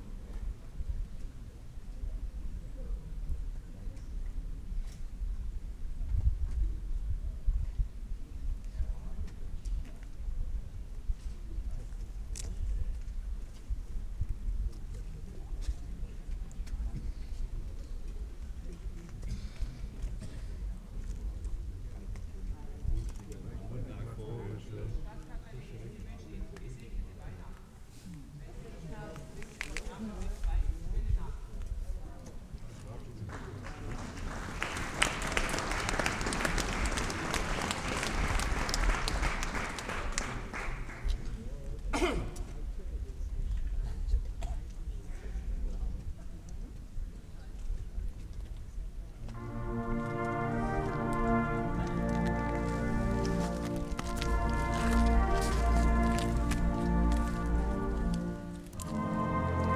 Rathaus, Isny im Allgäu, Weihnachtsblasen
Weihnachtsblasen 2009. Wie im Bilderbuch: Es lag Schnee, und eine recht große Menge Menschen versammelte sich unter dem Rathausbalkon, auf dem eine ca. zehn Mitglieder starke Blaskapelle Weihnachtslieder spielte. Trotz der eisigen Temperaturen bekamen die Musiker einen ganz ordentlichen Sound hin, die Arrangements waren wirklich sehr nett. Manche der anwesenden Zuhörer sangen auch mit – sehr feierlich ...
Baden-Württemberg, Deutschland, European Union, 24 December 2009